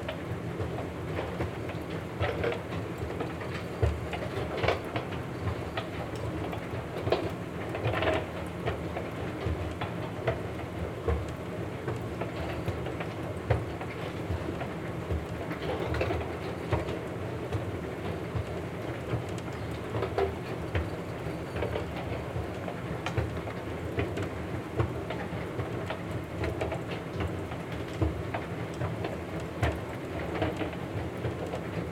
Park Ave, New York, NY, USA - An Escalator at Grand Central
Sound of an escalator at Grand Central.